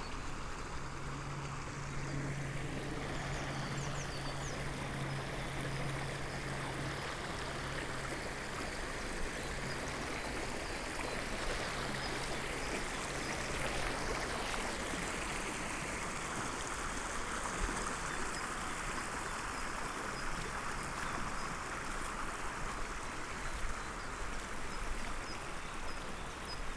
Klein Wall, Löcknitztal, Grünheide, Deutschland - sound of river Löcknitz
sound of the beautiful river Löcknitz, at village Klein Wall, near bridge
(Sony PCM D50, DPA4060)